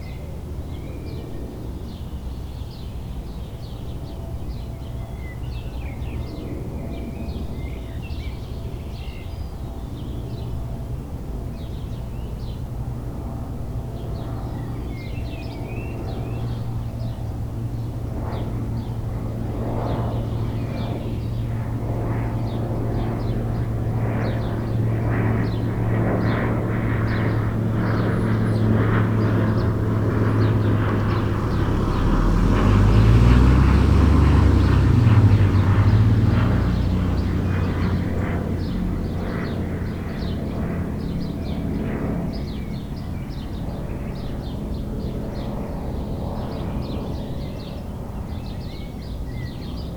burg/wupper: ritterplatz - the city, the country & me: nearby a crossing of country lanes
singing birds, plane crossing the sky and in the background the sound of the motorway a1
the city, the country & me: may 6, 2011
Solingen, Germany, 6 May 2011